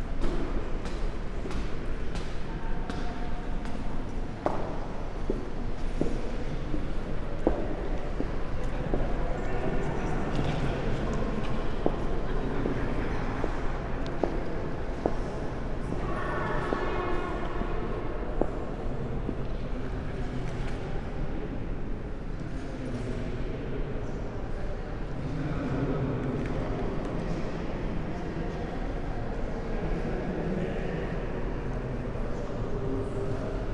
Leopold museum, Wien, Austria - walking, spaces